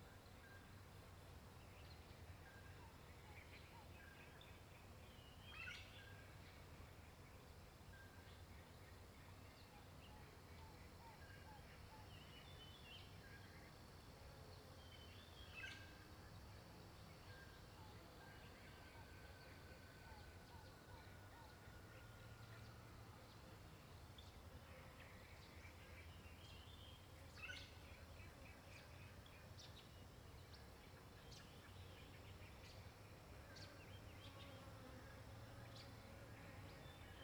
草湳溼地, 桃米里, Puli Township - Bird sounds
Wetlands, Bird sounds
Zoom H2n MS+XY
Puli Township, Nantou County, Taiwan, 27 March, 09:10